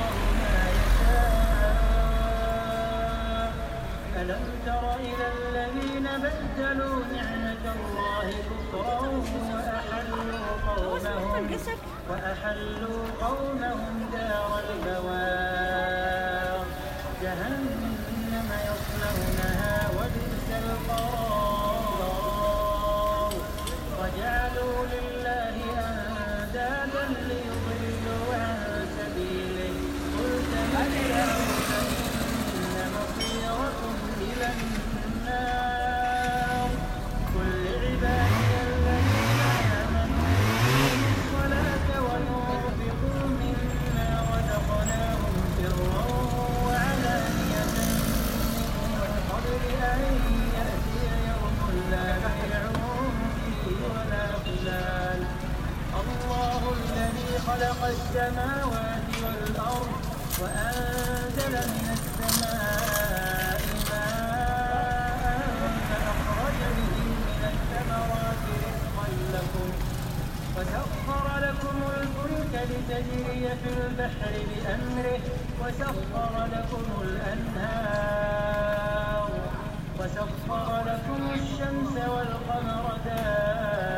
Grande Rue de Fès El Jdid, Fès, Morocco - Quartier juif
A busy road in the jewish neighbourbood of Fes, Morocco. It was prayer time, as the imam was calling the faithful to the mosque nearby. His song came out from the megaphones on the top of the minaret and reached far enough to not cross over with the song of other mosques further away. There was a portable kitchen in the corner of the road with someone cooking some kind of meat and made a big column of smoke that depending on the direction of the wind, made it very difficult to breathe for the pedestrians. Some people greeted to me as they saw me standing still recording.